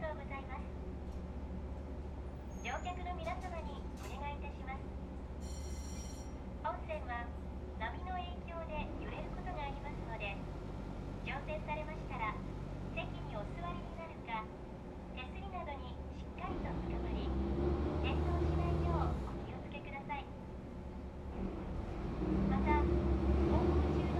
Japan, Fukuoka, Kitakyushu, Wakamatsu Ward, Honmachi, 若松渡場 - Noisy Ferry Crossing
A short passenger ferry crossing from Wakamatsu to Tobata.